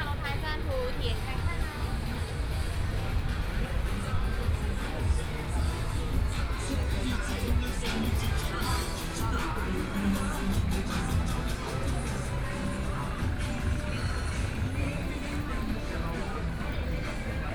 {"title": "Yuzhu 3rd St., Xinxing Dist. - Shopping district", "date": "2014-05-15 19:54:00", "description": "walking in the Shopping district, Traffic Sound", "latitude": "22.62", "longitude": "120.30", "altitude": "14", "timezone": "Asia/Taipei"}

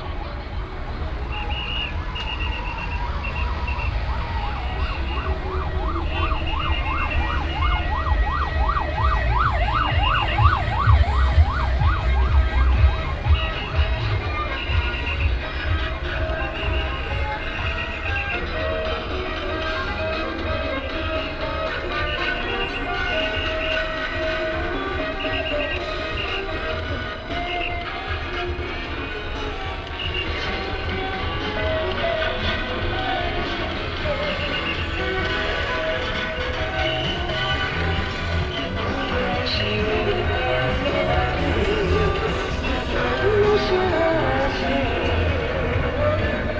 {"title": "Xianan, Huwei Township - In the road corner", "date": "2017-03-03 10:36:00", "description": "In the road corner, Traffic sound, Whistle sound, Matsu Pilgrimage Procession", "latitude": "23.68", "longitude": "120.40", "altitude": "22", "timezone": "Asia/Taipei"}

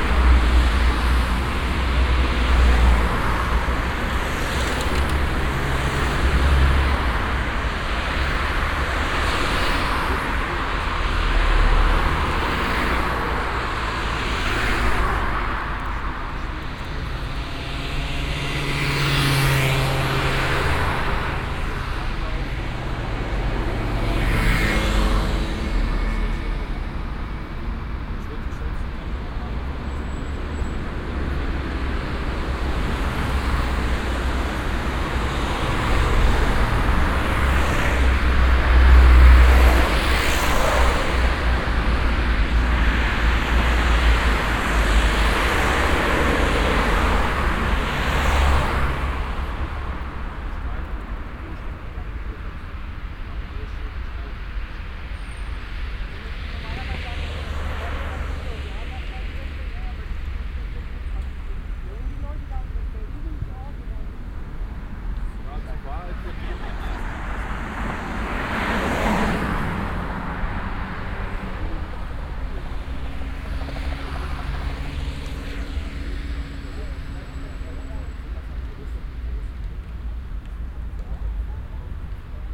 {"title": "cologne, maybachstrasse, erftstrasse, verkehr an ampel", "date": "2008-09-20 11:37:00", "description": "reger nachmittagsverkehr an stadtausfahrtsstrecke, übergang ampel\nsoundmap nrw:\nprojekt :resonanzen - social ambiences/ listen to the people - in & outdoor nearfield recordings", "latitude": "50.95", "longitude": "6.94", "altitude": "50", "timezone": "Europe/Berlin"}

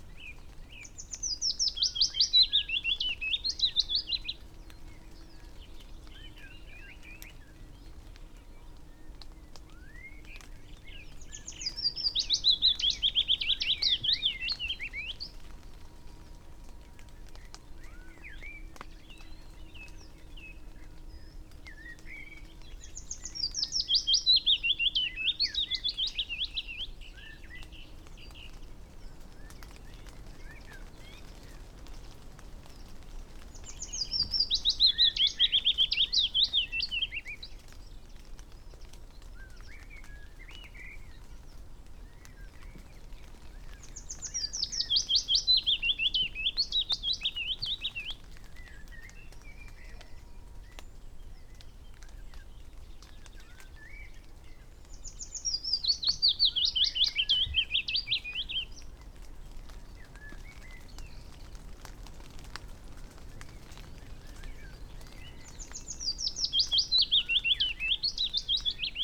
{"title": "Green Ln, Malton, UK - willow warbler soundscape ... with added moisture ...", "date": "2020-06-14 06:07:00", "description": "willow warbler soundscape ... with added moisture ... foggy morning ... moisture dripping from trees ... skywards pointing xlr SASS to Zoom H5 ... starts with goldfinch song ... then alternates and combines willow warbler and blackbird song as they move to different song posts and return ... bird song ... calls from ...chaffinch ... wood pigeon ... whitethroat ... song thrush ... pheasant ... yellowhammer ... skylark ... wren ... linnet ... background noise ...", "latitude": "54.12", "longitude": "-0.54", "altitude": "83", "timezone": "Europe/London"}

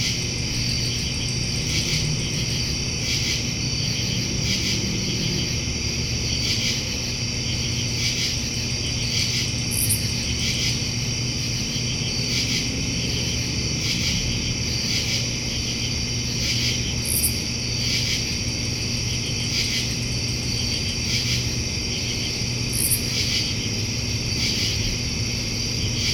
{"title": "Aronow Pl, Mahwah, NJ, USA - Nocturnal Insect Chorus", "date": "2021-08-23 22:59:00", "description": "A chorus consisting of crickets, katydids, and other nocturnal insects. This audio was captured from an open window in a large house. Cars can be heard in the background, as can the hum of an AC fan.\n[Tascam DR-100mkiii w/ Primo EM-272 omni mics]", "latitude": "41.08", "longitude": "-74.13", "altitude": "166", "timezone": "America/New_York"}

